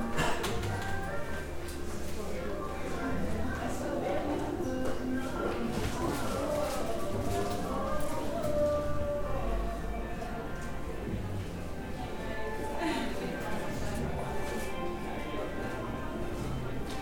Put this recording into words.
A Saturday afternoon walk in the North Laines, Brighton. Northwards through the street market in Upper Gardener Street before turning right and right again and heading south down Kensington Gardens (which isn’t a garden but a street of interesting shops)…During my walk down Kensington Gardens I popped into the Crane Kalman Gallery to look at a series of Rock Photographs they have on dislay